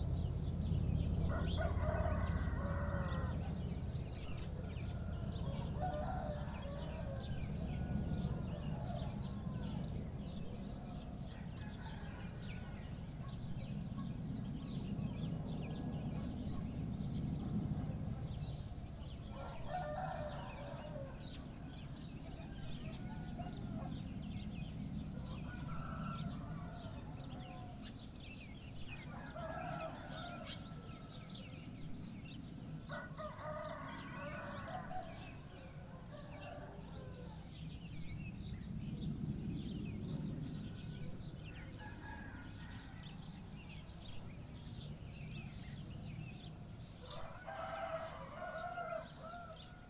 20171123_0603-0612 arrivée des hélicos de tourisme CILAOS
Mais là c'est le ballet d'ouverture pour le réveil.
Saint-Pierre, La Réunion, France, 23 November 2017